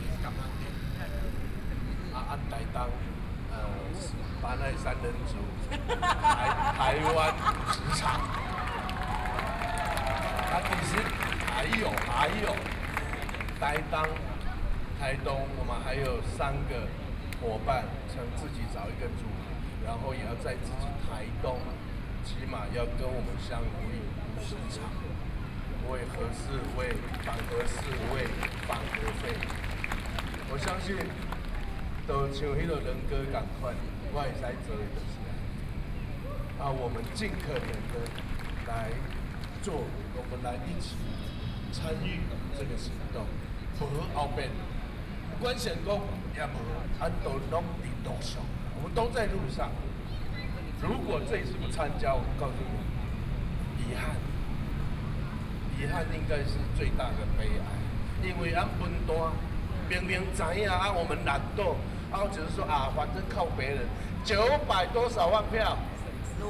National Chiang Kai-shek Memorial Hall, Taipei - antinuclear
Taiwanese aborigines are published antinuclear ideas, Taiwanese Aboriginal singers in music to oppose nuclear power plant, Aboriginal songs, Sony PCM D50 + Soundman OKM II